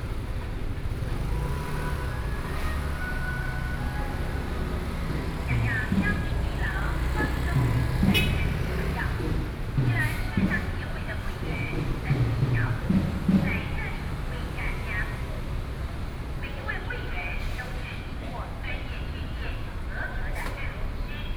Zhongshan Park, Luodong Township - in the Park
in the Park, Hot weather, Traffic Sound